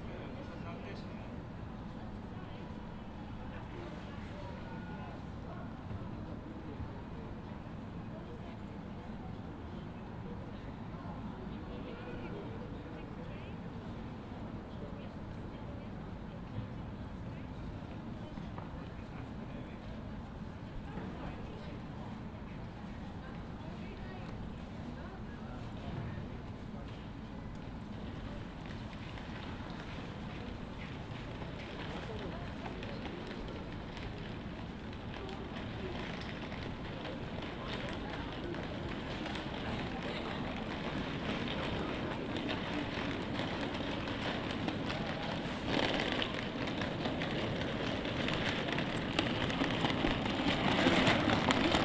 Jaffa St. Jerusalem
Tram station
Recoded by Hila Bar-Haim
מחוז ירושלים, ישראל